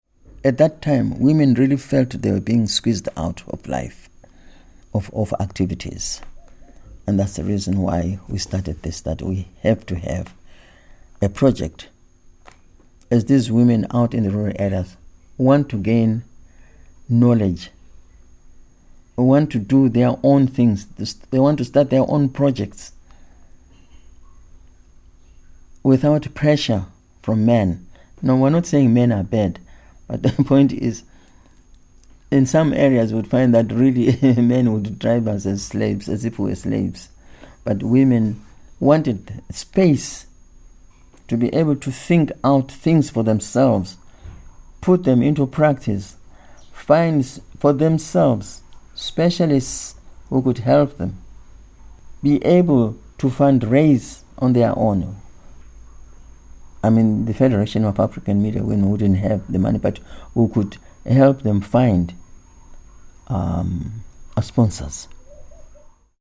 Emerald Hill, Harare, Zimbabwe - Mavis Moyo Development Through Radio…
… Mavis takes us through her story as a rural woman entering broadcasting profession. Her passion for the development of rural women made her the driving force of the Development Through Radio project in the 1980s; and this is what she describes for us here…
Mavis Moyo, veteran broadcaster with ZBC Radio 4, founding member of Federation of African Media Women Zimbabwe (FAMWZ).
18 September, ~11:00